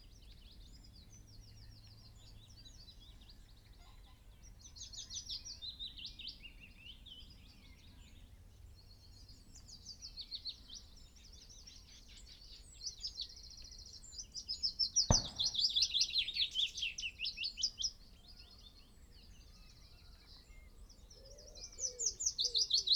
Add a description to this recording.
willow warbler song soundscape ... dpa 4060s clipped to bag in crook of tree to zoom h5 ... bird song ... calls from ... wren ... wood pigeon ... song thrush ... crow ... pheasant ... dunnock ... chaffinch ... yellowhammer ... buzzard ... magpie ... blackbird ...